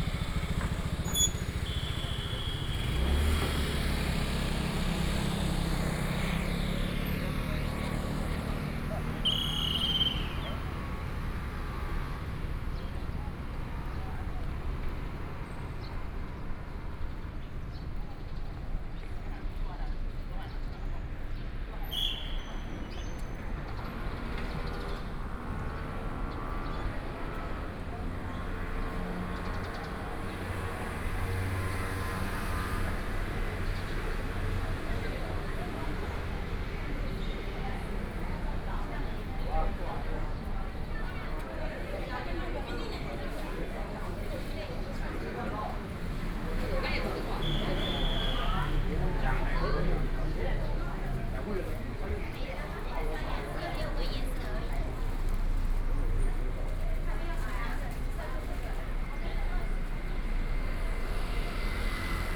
In the morning, walking on the Road, Traffic Sound, Birdsong, Tourists
Kaohsiung City, Taiwan, May 2014